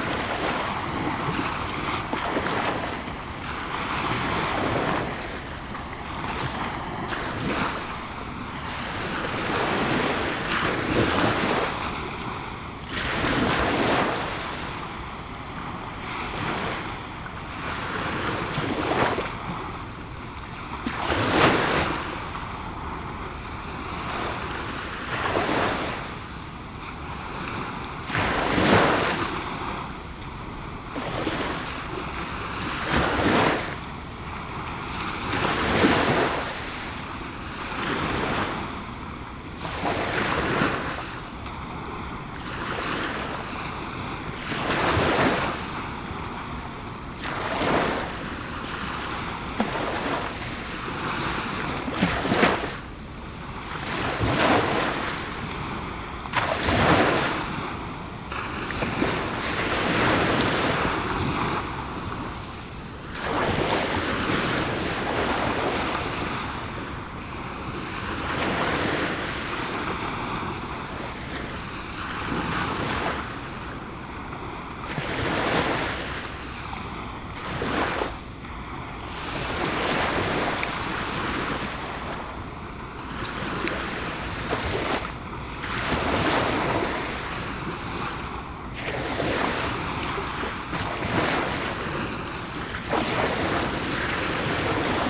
Rivedoux-Plage, France - The bridge beach
Recording of the small waves near the bridge of Ré.